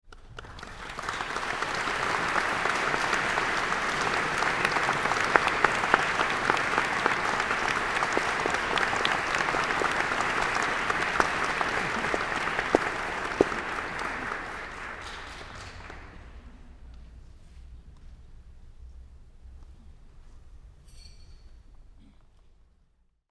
Edinburgh. Church of St Mary. Applause.